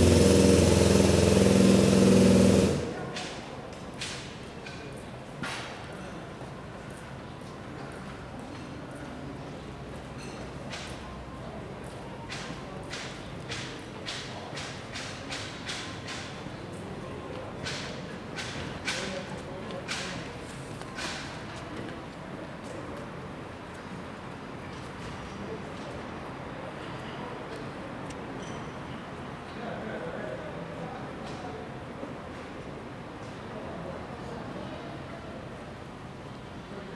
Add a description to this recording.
borers digging the entrance to a private parking in a public pedestrian zone - at the cost of citizens; erased trees and most of pavement